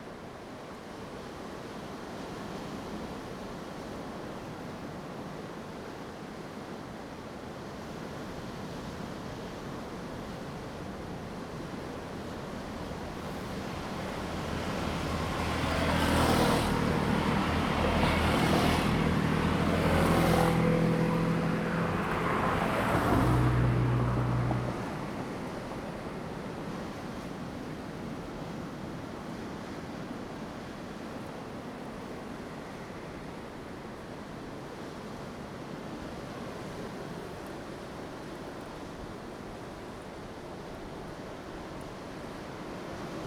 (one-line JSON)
{"title": "Koto island, Taitung County - On the coast", "date": "2014-10-29 15:32:00", "description": "On the coast, Sound of the waves\nZoom H2n MS+XY", "latitude": "22.00", "longitude": "121.59", "altitude": "11", "timezone": "Asia/Taipei"}